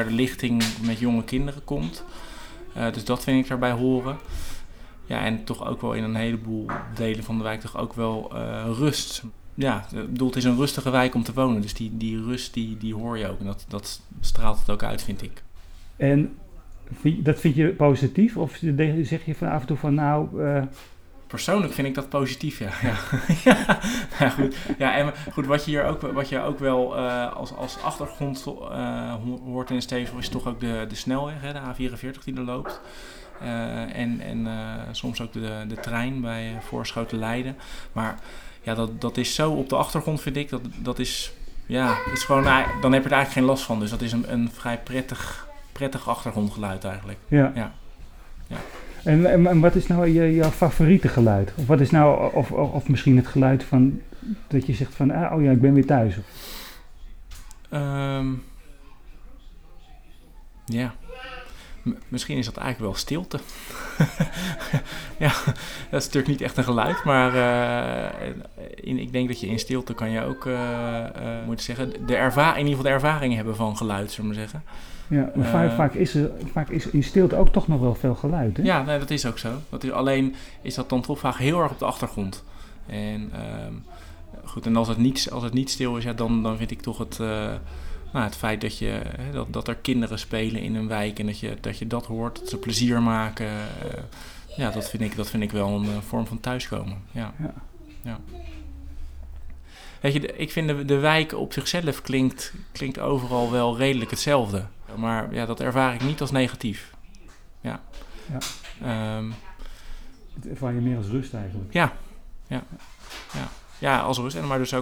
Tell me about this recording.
Joost over de geluiden van de Stevenshof, talking about the sounds of the Stevenshof